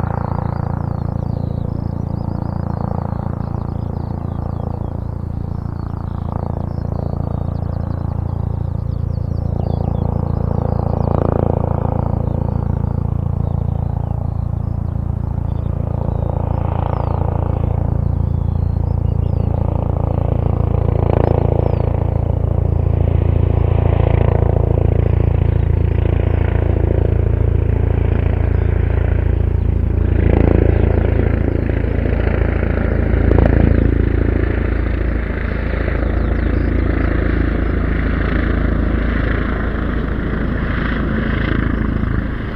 berlin, tempelhofer feld: asphaltweg - the city, the country & me: asphalted way
birds, police helicopter, in the background music of the tempelhof opening festival
the city, the country & me: may 8, 2010
Germany, 8 May 2010